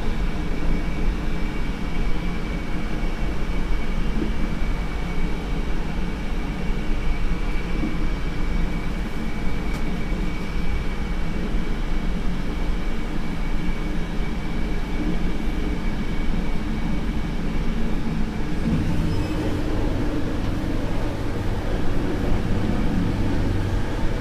Sestri Levante, Metropolitan City of Genoa, Italie - Arrival in train in Sestri Levante
in an compartment of the italian train
dans le compartiment d'un train italien
binaural sound
son aux binauraux
Sestri Levante GE, Italy, 21 October 2016